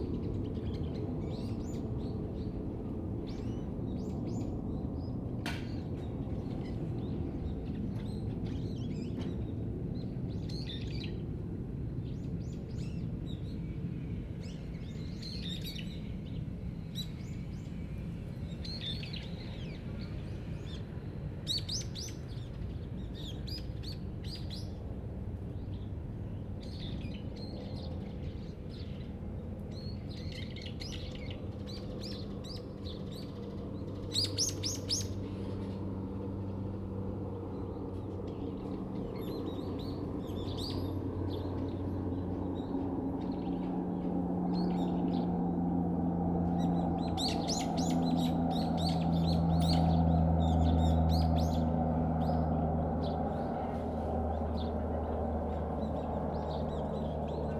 Garak-ro, Gimhae-si, 韓国 - Birds singing
Birds singing, Traffic Sound, Aircraft flying through
Zoom H2n MS+XY
Gimhae, Gyeongsangnam-do, South Korea